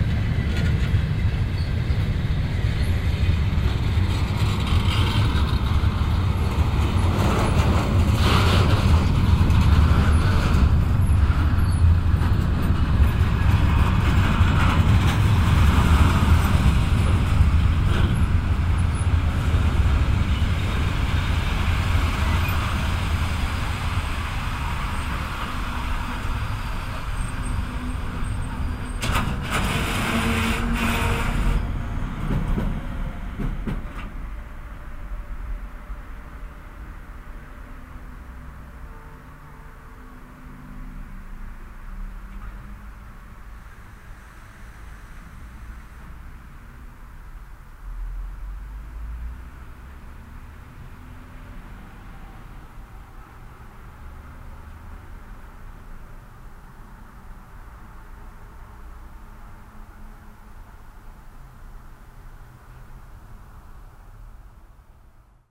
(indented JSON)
{
  "title": "cologne, south suedbrücke, trainan - cologne, sued, suedbrücke, zug fährt auf und hält an",
  "date": "2008-05-21 16:18:00",
  "description": "project: social ambiences/ listen to the people - in & outdoor nearfield recordings",
  "latitude": "50.92",
  "longitude": "6.97",
  "altitude": "43",
  "timezone": "Europe/Berlin"
}